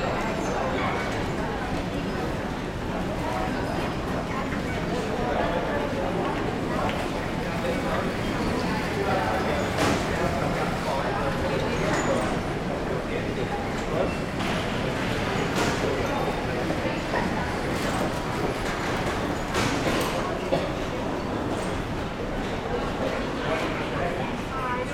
Tube station, Bond Street, Londres, Royaume-Uni - Bond Street
Inside the tube station, zoom H6